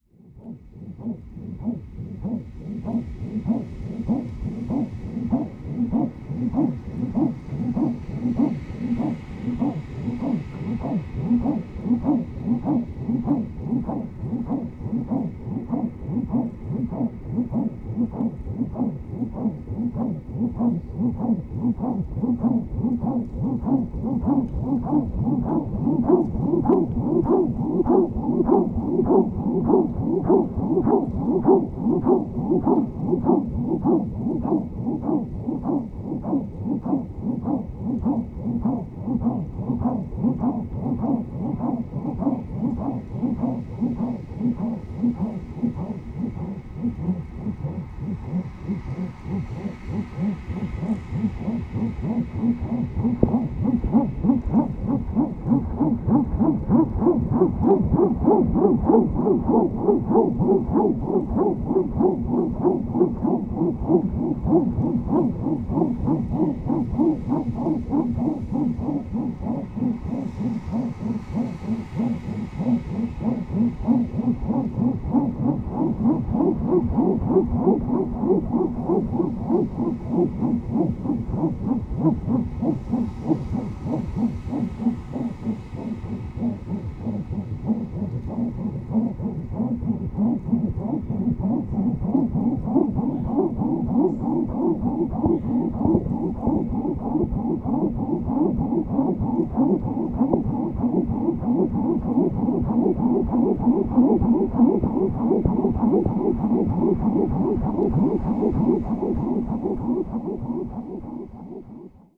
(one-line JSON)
{"title": "wind wave UNO city, Vienna", "date": "2011-08-07 16:45:00", "description": "elastic wind wave recorded with a contact mic. thanks Milos!", "latitude": "48.23", "longitude": "16.41", "altitude": "174", "timezone": "Europe/Vienna"}